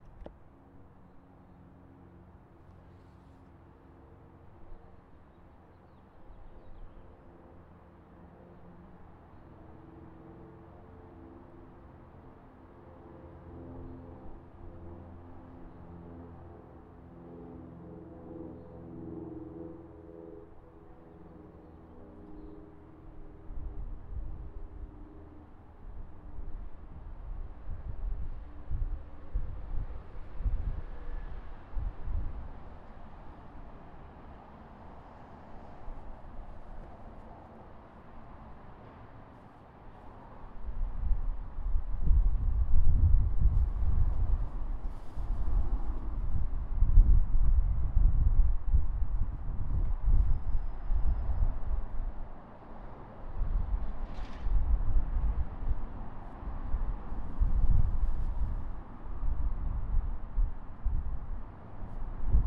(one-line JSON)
{"title": "E Dale St, Colorado Springs, CO, USA - CCInnFrontEnterance28April2018", "date": "2018-04-26 14:55:00", "description": "Recorded at CC Inn Front Entrance at 2:55pm. Facing east. Recorded with a dead cat cover on a Zoom H1 recorder. Cars, footsteps, an airplane, and distant construction are all part of the soundscape.", "latitude": "38.85", "longitude": "-104.82", "altitude": "1843", "timezone": "America/Denver"}